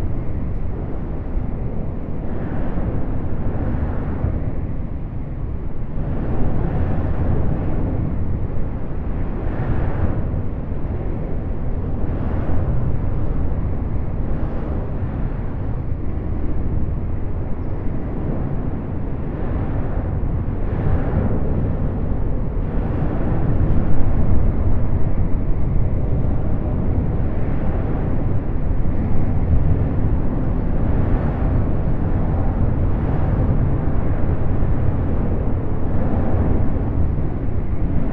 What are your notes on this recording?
Underside of the 2 freeway where as it meets the LA River